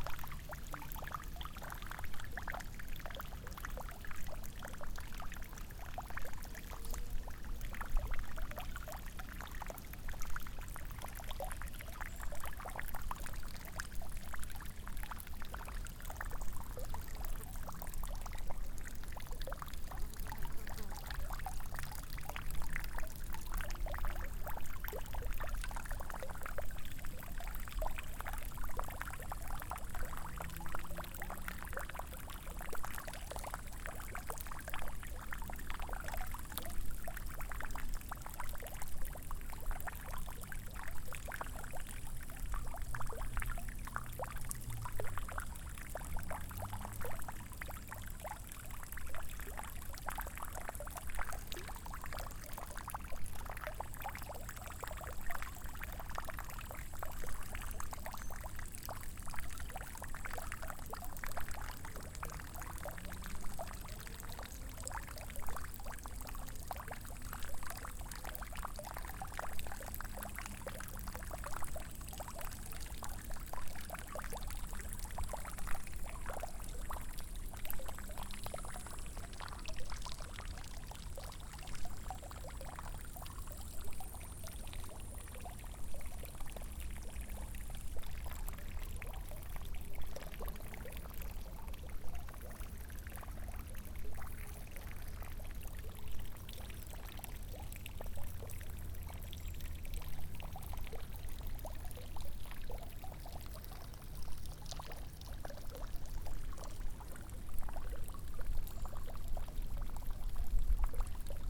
Monserrate Sintra, Lisbon, water reservoir
water reservoir, forest, water dropping
Portugal